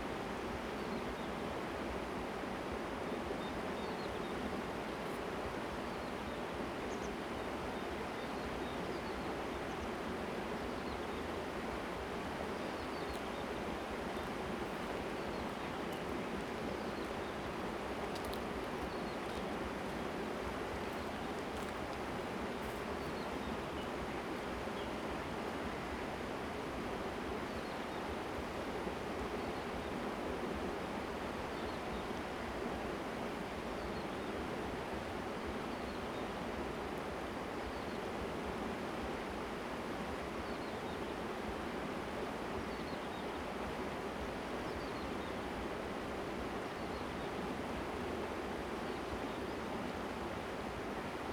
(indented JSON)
{
  "title": "太麻里鄉金崙溪, Taitung County - stream sound",
  "date": "2018-04-01 16:38:00",
  "description": "stream sound, On the river bank, Bird call\nZoom H2n MS+XY",
  "latitude": "22.53",
  "longitude": "120.94",
  "altitude": "40",
  "timezone": "Asia/Taipei"
}